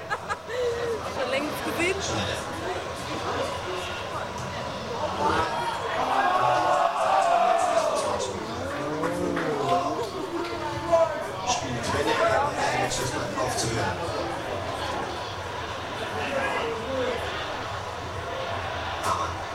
Berlin: Football final 2002/ WM-Finale 2002
Berlin, Germany